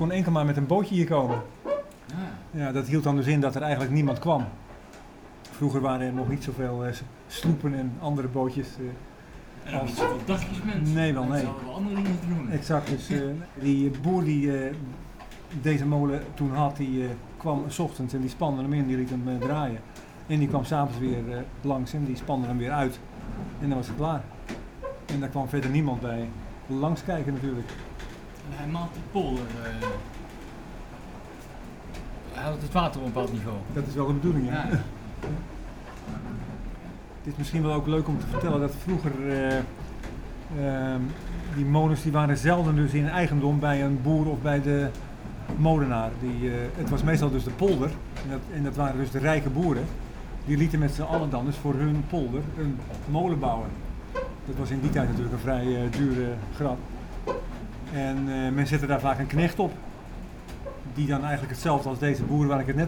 Molenaar Kees vertelt over de poldermolen vroeger